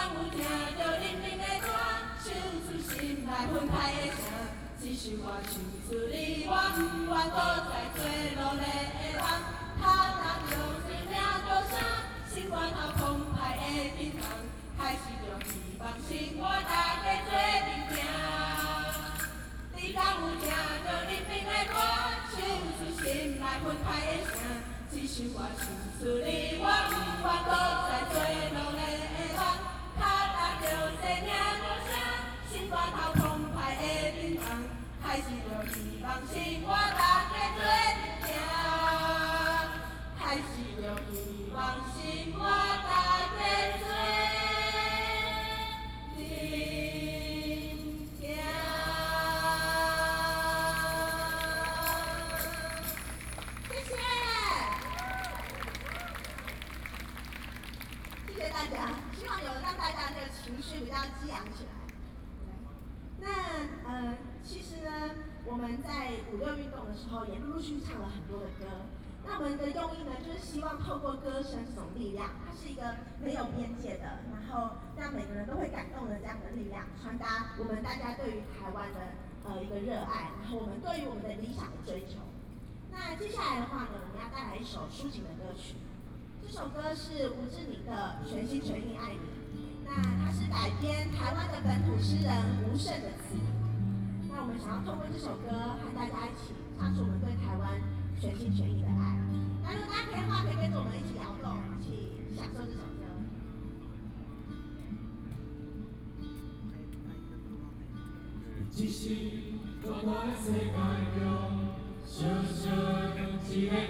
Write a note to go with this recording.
A group of college students singing, Anti-Nuclear Power, Zoom H4n+ Soundman OKM II